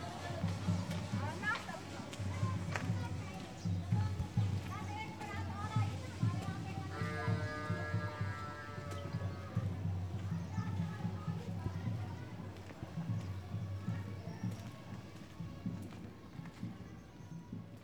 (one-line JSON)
{"title": "Rishikesh, India, North Indian Wedding", "date": "2010-12-19 18:17:00", "description": "day time, lot of fun", "latitude": "30.12", "longitude": "78.32", "altitude": "360", "timezone": "Asia/Kolkata"}